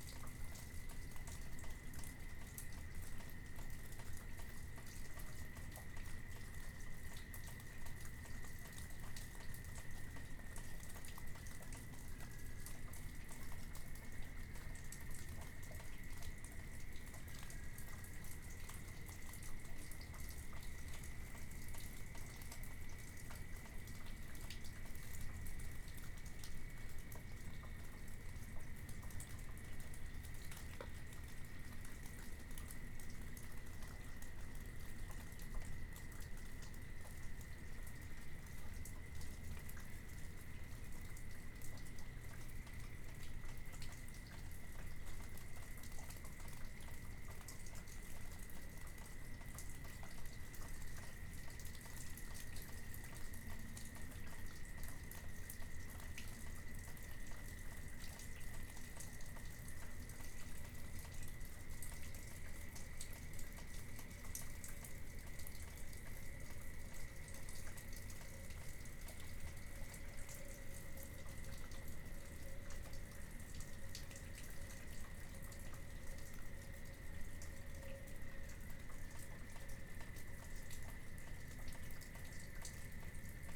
Waters Edge - Severe Warned Storm Part 2
A line of severe warned storms came across the metro in the evening which put us under a Sever Thunderstorm warning and a Tornado warning for the adjacent county. The outdoor warning sirens can be heard early in the recording for the Severe Thunderstorm warning and then later from the adjacent county for the tornado warning. Rainfall rates at the beginning of the storm were measured by my weather station at 8.6 inches per hour and we got about 1.25 inches in a half hour. Luckily we didn't get much wind so there was no damage.